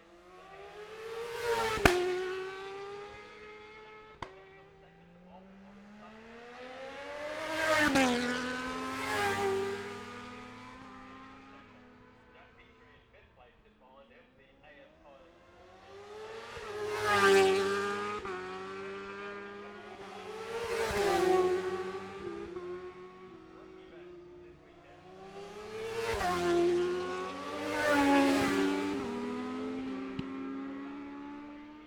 Jacksons Ln, Scarborough, UK - gold cup 2022 ... 600cc qualifying ...
the steve henshaw gold cup 2022 ... 600cc qualifying group 1 and group 2 ... dpa 4060s clipped to bag to zoom f6 ...
16 September